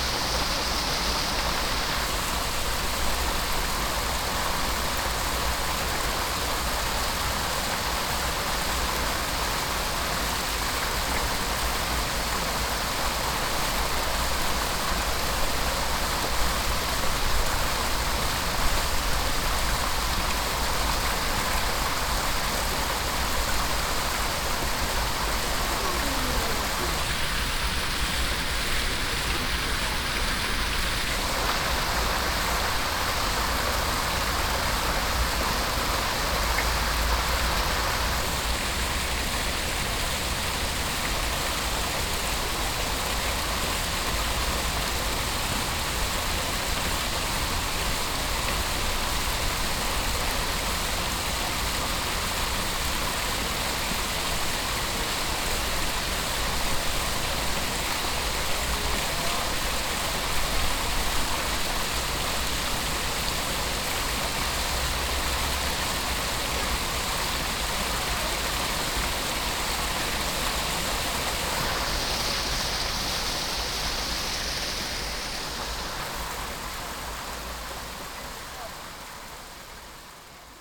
essen, hohe domkirche, fountain
Nahe der Domkirche. Die Kaskade eines Brunnens auf drei Ebenen. Im Hintergrund die Geräusche eines Kindes das Verstecken mit seinem, Vater spielt.
A cascade of a 3 level fountain close to the church. In the distance a child playing hide and seek with his father. Recorded on a slight windy day in the early afternoon.
Projekt - Stadtklang//: Hörorte - topographic field recordings and social ambiences
Essen, Germany, 31 May 2011